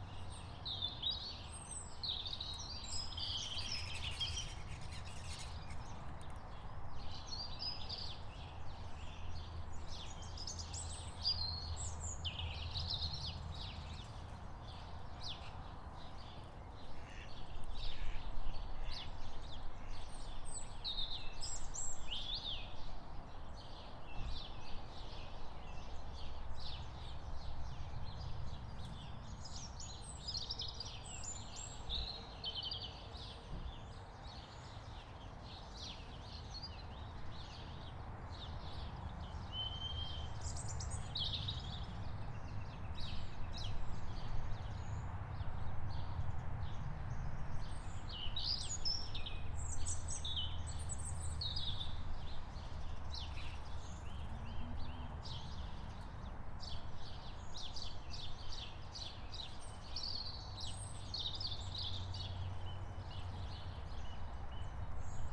Coulby Newham, Middlesbrough, UK - Recording of Local Area
This is a recording of the area conducted over 15 minuets with a chnage of position every 5 this was done with a usb microphone
5 April 2017, ~09:00